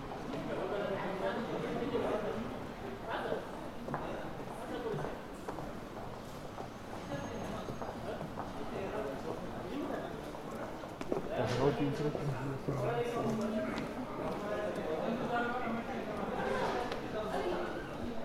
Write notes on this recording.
At the renovated minus one level of the Aarau train station: in this very clean sourrounding several noises are audible.